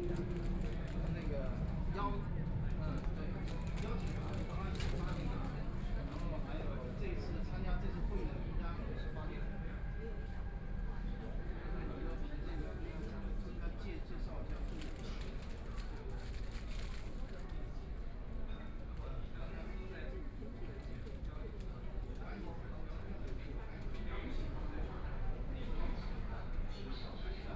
Laoximen Station, Shanghai - walk in the Station
Walking in the subway station, Binaural recording, Zoom H6+ Soundman OKM II
Huangpu, Shanghai, China, 25 November 2013, 18:31